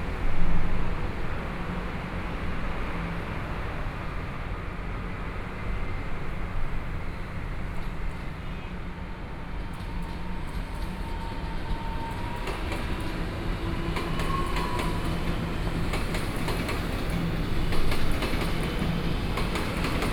Minzu Overpass, Taoyuan - Traffic noise
Train traveling through, Sony PCM D50 + Soundman OKM II